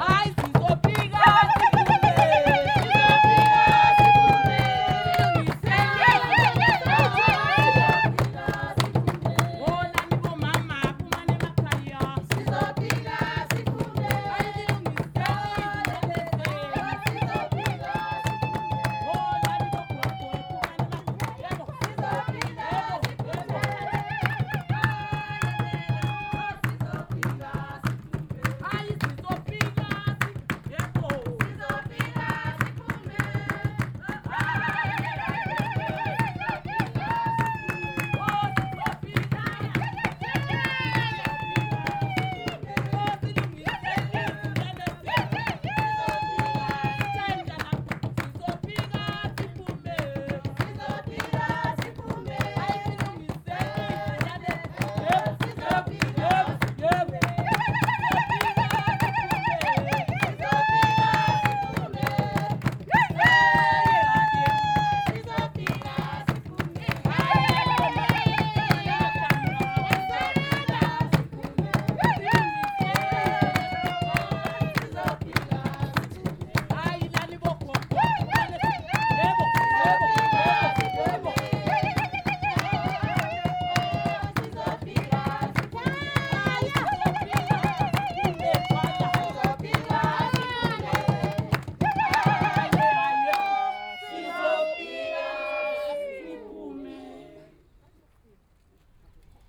When the ambience indoors became a bit rich due to a youth group next-door playing music, we decided to continue outside (in the boiling midday sun…)…
Kalanga dance : “you can arrest me…but I’ll always come back to the arts!”